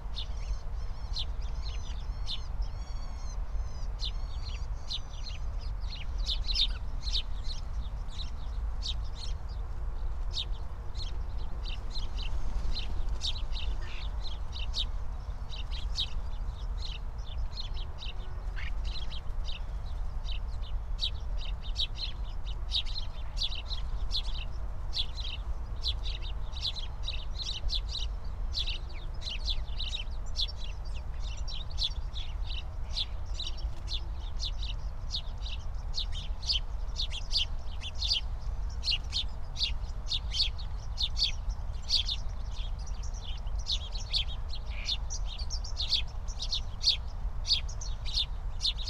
Berlin, Tempelhofer Feld - field ambience /w birds
08:06 Berlin, Tempelhofer Feld - field ambience